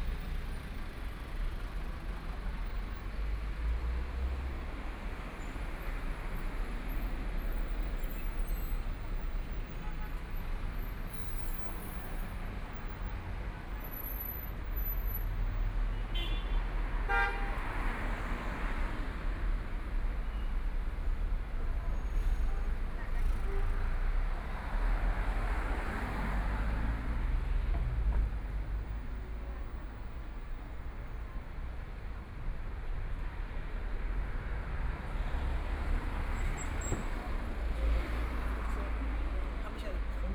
Yuanmingyuan Road, Shanghai - Walking through the Street
Walking through the old neighborhoods, Traffic Sound, Binaural recording, Zoom H6+ Soundman OKM II
25 November, ~13:00